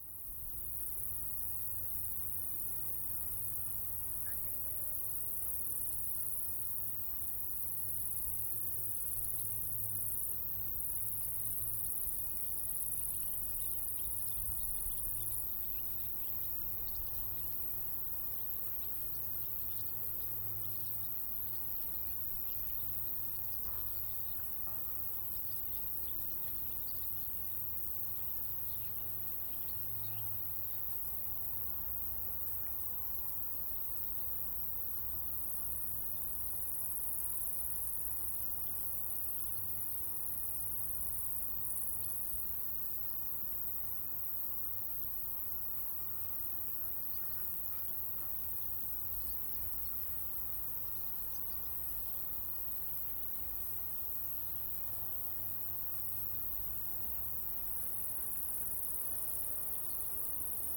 Saalborner Weg, Bad Berka, Germany - Insects and birds chorus Bad Berka
Best listening results on headphones.
Vibrant choral voices of insects within stereo field, dotted bird vocalizations, air drones.
Recording and monitoring gear: Zoom F4 Field Recorder, LOM MikroUsi Pro, Beyerdynamic DT 770 PRO/ DT 1990 PRO.